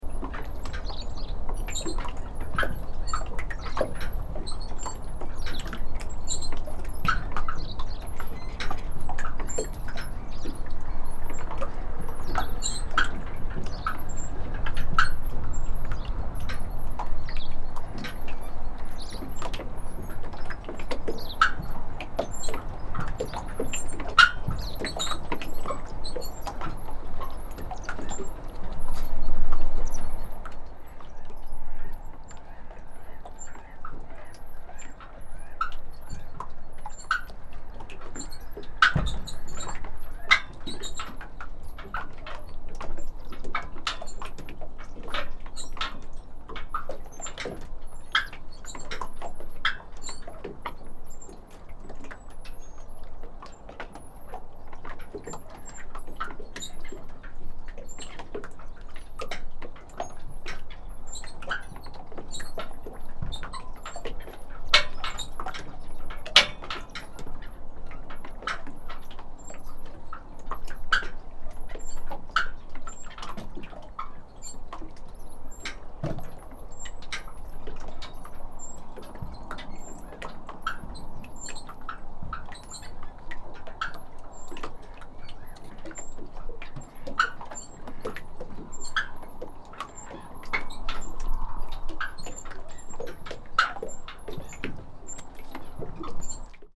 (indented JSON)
{
  "title": "Sand, Umeå. Moored - Sand, Umeå. Moored boats#2",
  "date": "2011-05-05 11:26:00",
  "description": "Small boats gently rocking, tied up on a small marina. The small boats are used to get to the nearby island across the river where some local people from Umeå have weekend cottages.",
  "latitude": "63.77",
  "longitude": "20.31",
  "altitude": "12",
  "timezone": "Europe/Stockholm"
}